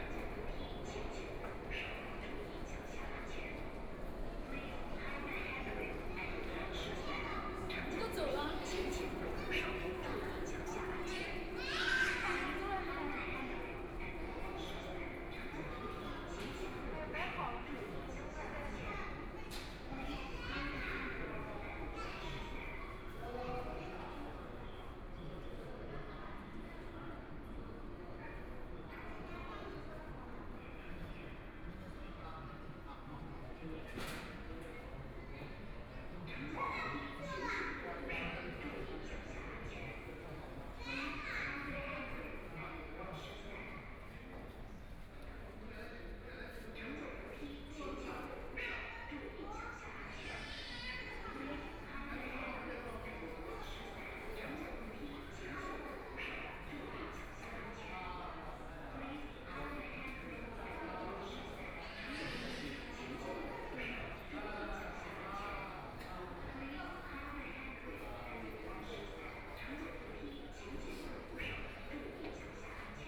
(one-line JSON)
{"title": "Laoximen Station, Shanghai - in the Station", "date": "2013-12-02 13:10:00", "description": "walking in the Laoximen Station, Binaural recordings, Zoom H6+ Soundman OKM II", "latitude": "31.22", "longitude": "121.48", "altitude": "13", "timezone": "Asia/Shanghai"}